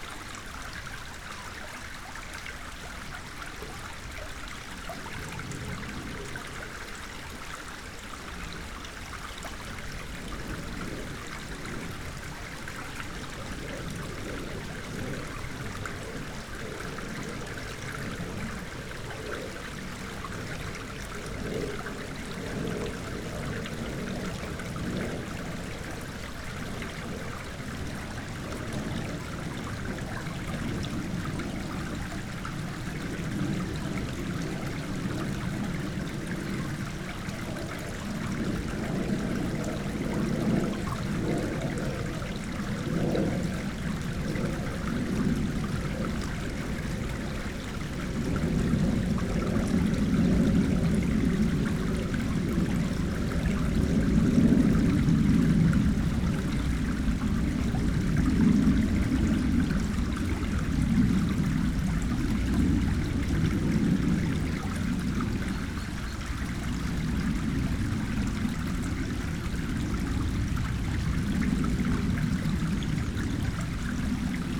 waterflow at the old watermill and a plane above
Lithuania, 6 October 2014, 12:30